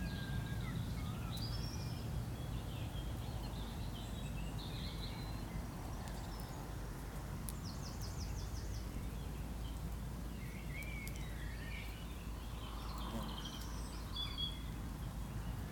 Ditchling Common, Hassocks, UK - Lazing in a park on a summers day
Recorded while eating a picnic. People, dogs, insects and planes pass by.